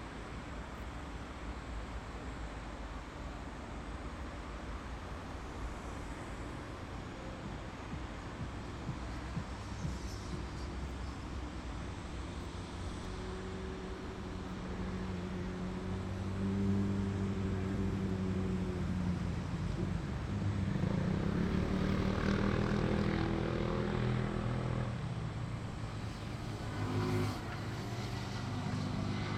Rijeka Kawasaki Park Pecine Lenac